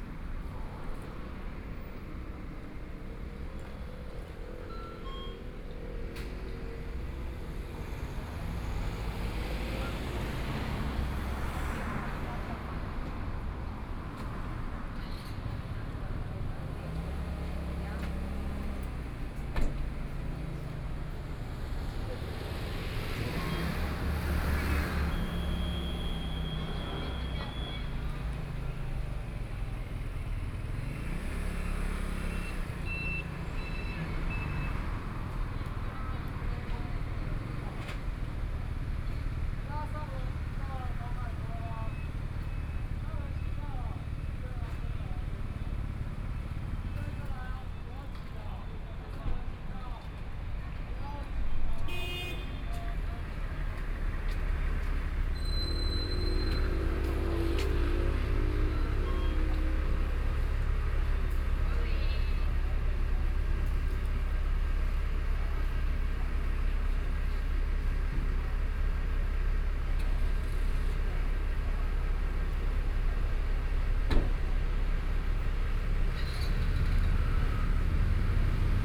Guoxing 1st St., Hualien City - Sitting intersection
Station regional environmental sounds, In front of a convenience store, Traffic Sound, Binaural recordings, Sony PCM D50+ Soundman OKM II